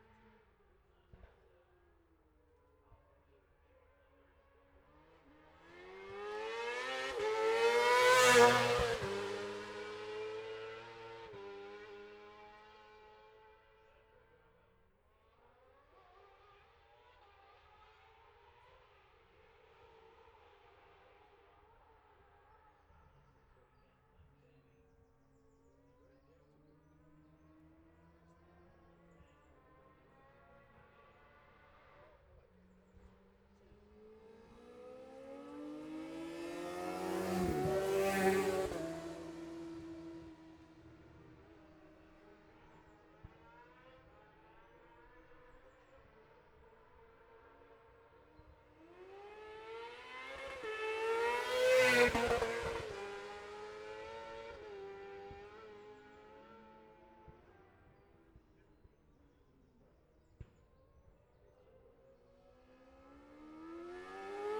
Jacksons Ln, Scarborough, UK - olivers mount road racing ... 2021 ...
bob smith spring cup ... F2 sidecars practice ... dpa 4060s to MixPre3 ...
May 2021